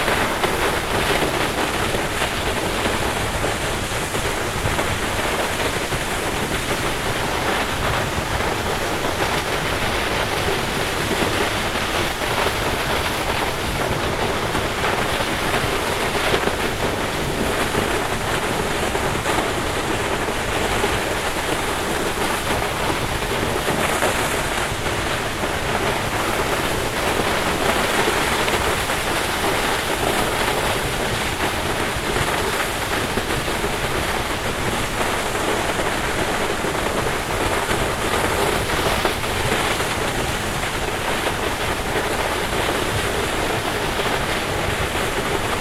Le fracas de l'eau de la cascade de Cerveyrieu sur les rochers en contrebas .